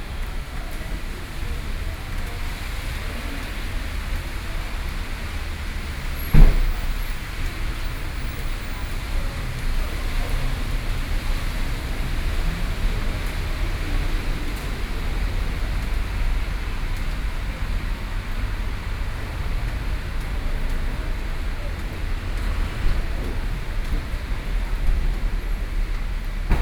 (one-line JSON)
{"title": "Lotung Poh-Ai Hospital, Yilan County - At the hospital gate", "date": "2013-11-07 09:41:00", "description": "Rainy Day, At the hospital gate, Between incoming and outgoing person, Vehicle sound, Binaural recordings, Zoom H4n+ Soundman OKM II", "latitude": "24.67", "longitude": "121.77", "altitude": "18", "timezone": "Asia/Taipei"}